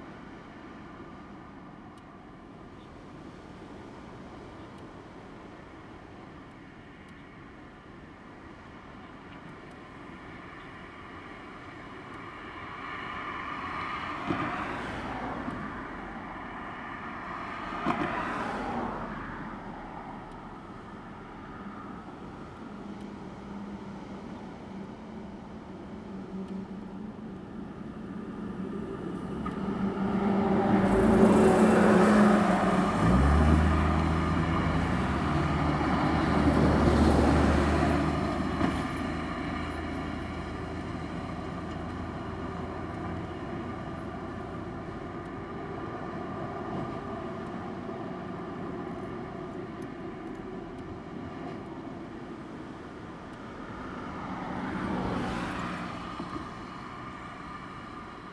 大竹村, Dawu Township - Traffic and waves sound
Sound of the waves, Traffic sound
Zoom H2n MS +XY
Dawu Township, Taitung County, Taiwan